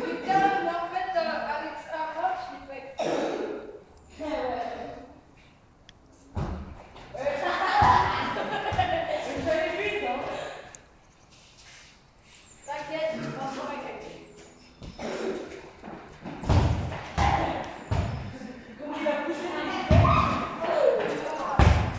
Quartier du Biéreau, Ottignies-Louvain-la-Neuve, Belgique - Playing football
Sunday afternoon, annoyed children play football in a huge hall with loud reverb.
Ottignies-Louvain-la-Neuve, Belgium, 13 March 2016